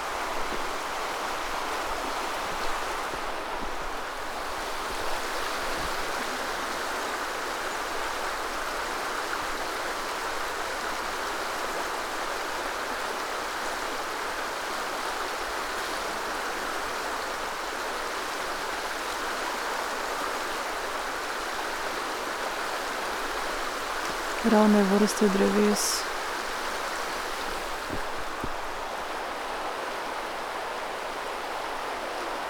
river Drava, Loka - black river, white stones ...